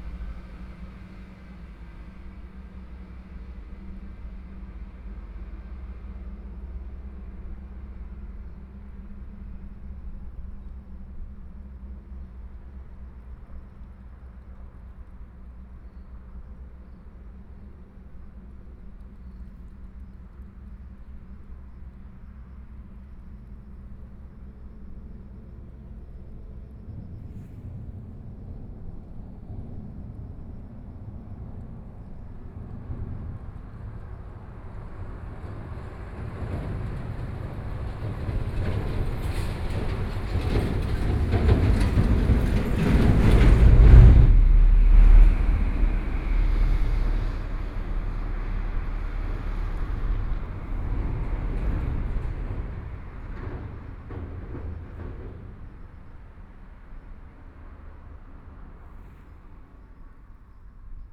{"title": "五結鄉鎮安村, Yilan County - Below the railroad tracks", "date": "2014-07-27 14:04:00", "description": "Below the railroad tracks, Hot weather, Traffic Sound\nSony PCM D50+ Soundman OKM II", "latitude": "24.71", "longitude": "121.77", "altitude": "9", "timezone": "Asia/Taipei"}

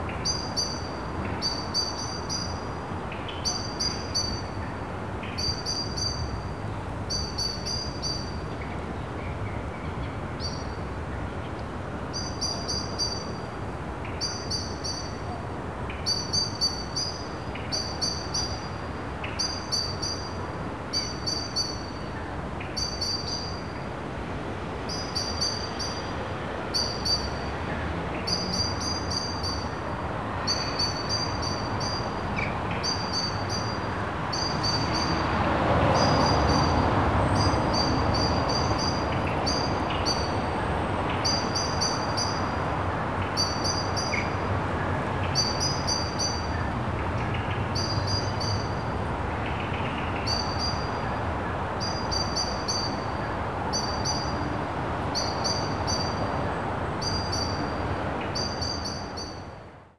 {"title": "Poznan, downtown, yard of st. Martin's Church - another hyped bird", "date": "2014-03-28 10:48:00", "description": "a bird chirping away in one of the trees in a small garden behind the church of st. martin.", "latitude": "52.41", "longitude": "16.93", "altitude": "75", "timezone": "Europe/Warsaw"}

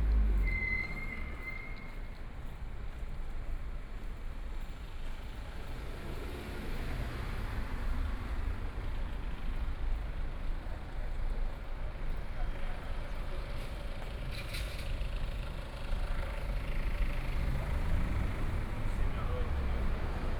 {"title": "Dehui St., Taipei City - walking in the Street", "date": "2014-04-03 12:01:00", "description": "walking in the Street, Traffic Sound, To the east direction", "latitude": "25.07", "longitude": "121.53", "altitude": "12", "timezone": "Asia/Taipei"}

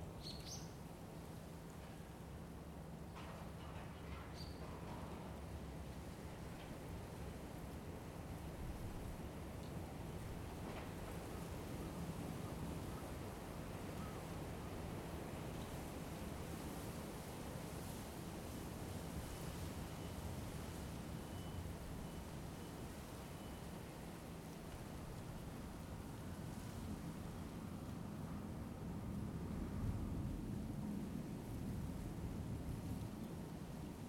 {"title": "Nishishinagawa, Shinagawa-ku, Tōkyō-to, Japonia - Trainsong", "date": "2015-01-10 12:00:00", "description": "Recorded from my room window. Living approx. 15 meters from railroad tracks, I get greeted everyday by subway trains and shinkansens. Recorded with Zoom H2n", "latitude": "35.61", "longitude": "139.73", "altitude": "17", "timezone": "Asia/Tokyo"}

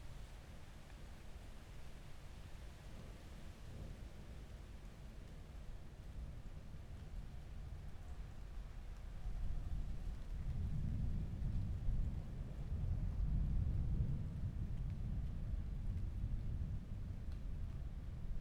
{"title": "Loughborough Junction, London, UK - summer storm london 2.30 AM", "date": "2014-07-18 02:38:00", "description": "lying listening to a summer storm at half past 2 in the morning on World Listening Day 2014\nRoland R-09HR, electret stereo omnis out an upstairs window onto back gardens in S London", "latitude": "51.46", "longitude": "-0.10", "altitude": "23", "timezone": "Europe/London"}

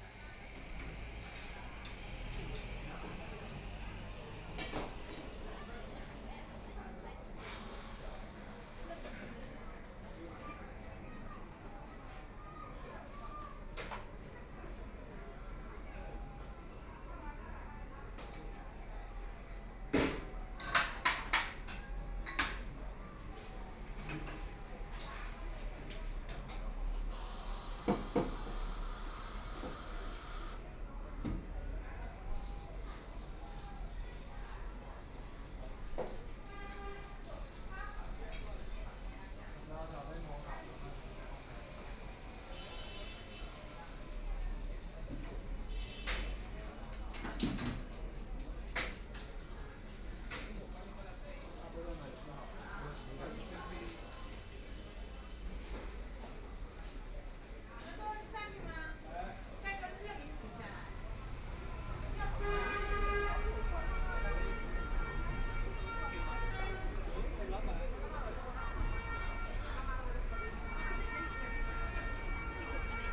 {"title": "Hongkou District, Shanghai - Inside the coffee shop", "date": "2013-11-23 12:38:00", "description": "Inside the coffee shop, Binaural recording, Zoom H6+ Soundman OKM II", "latitude": "31.27", "longitude": "121.48", "altitude": "9", "timezone": "Asia/Shanghai"}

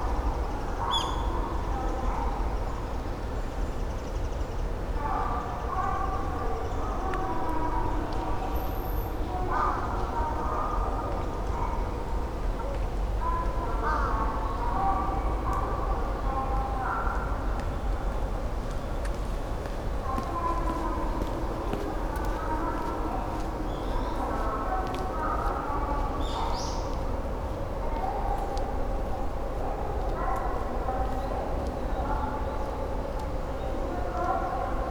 {"title": "Tokyo, Shibuya, Yoyogi park - announcement in the park", "date": "2013-03-28 16:48:00", "latitude": "35.68", "longitude": "139.70", "altitude": "56", "timezone": "Asia/Tokyo"}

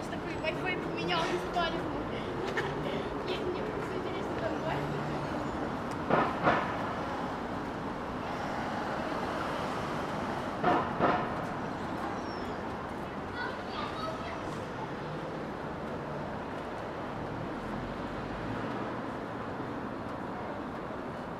{"title": "Орджоникидзе ул., Москва, Россия - Near the cafe TAMANNO", "date": "2020-01-27 16:50:00", "description": "Near the cafe TAMANNO (12 st4, Ordzhonikidze street). I sat on a bench and listened to what was happening around me. Frosty winter day, January 27, 2020. Recorded on a voice recorder.", "latitude": "55.71", "longitude": "37.59", "altitude": "152", "timezone": "Europe/Moscow"}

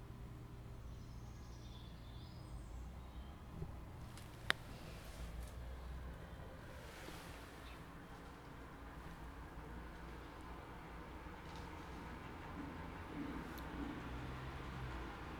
{"title": "Hoetmar, Mitte, Germany - At bus stop Mitte...", "date": "2020-10-23 12:30:00", "description": "arriving somewhere quiet and unknown...", "latitude": "51.87", "longitude": "7.91", "altitude": "67", "timezone": "Europe/Berlin"}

